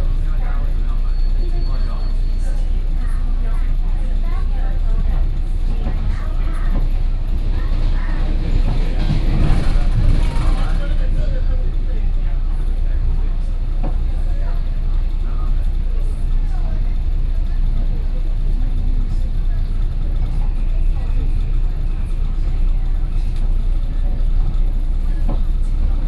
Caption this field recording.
inside the Trains, Sony PCM D50 + Soundman OKM II